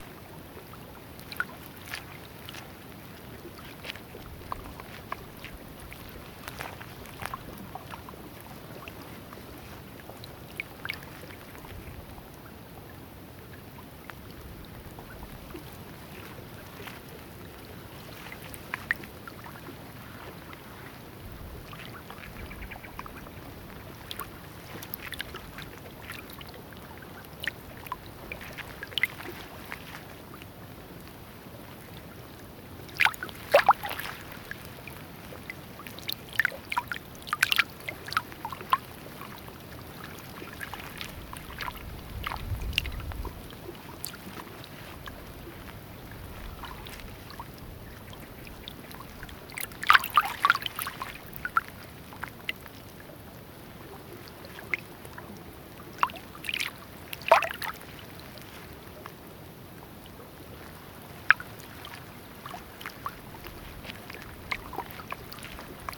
Freixiosa, Portugal
Freixiosa, Miranda do Douro, Portugal. Mapa Sonoro do Rio Douro Douro River Sound Map
19 February 2014, ~08:00